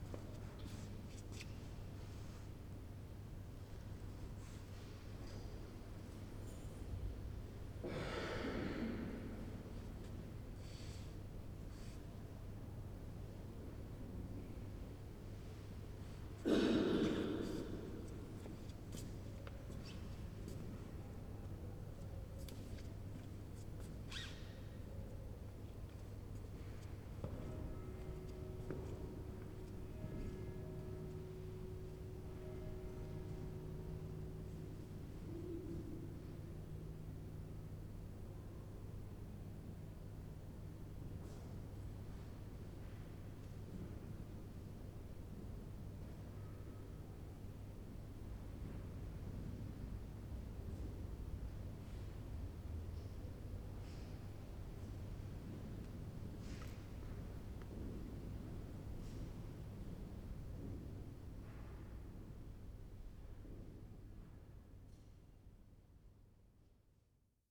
visitors, church bells
the city, the country & me: july 25, 2010